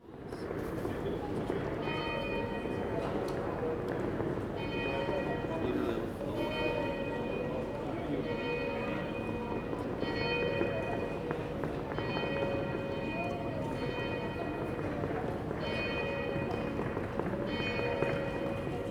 Ungelt Square - Ungelt at noon
Combination of Bells at Ungelt square, Sunday 12 pm
Staré Město, Praha-Praha, Czech Republic, 12 April 2015, 12:00